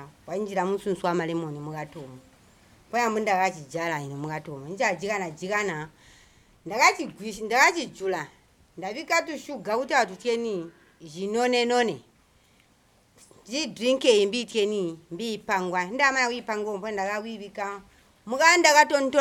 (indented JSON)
{
  "title": "Lwiindi Ground, Sinazongwe, Zambia - how to make Saccaranda Drink...",
  "date": "2016-08-24 13:04:00",
  "description": "Bina Annet tells us how to produce “Saccaranda Drink” which contains Moringa and lots of sugar ...",
  "latitude": "-17.25",
  "longitude": "27.45",
  "altitude": "497",
  "timezone": "GMT+1"
}